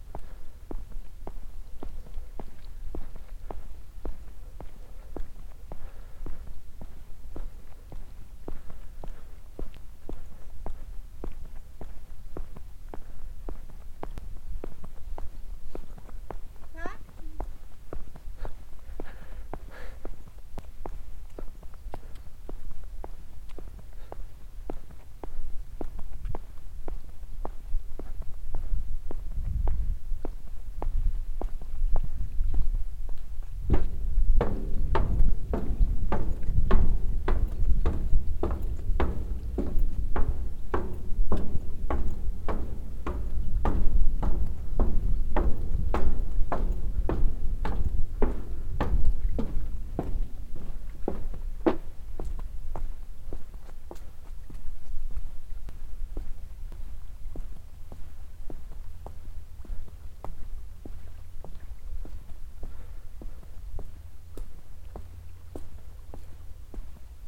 unfortuntely still not visible onthis google map, the laké has changed a lot. the water is now much higher and there is a promenade around the whole lake including several plattforms for boats. here I am walking on one of it coming from the stone floored lake side path.
soundmap d - social ambiences and topographic field recordings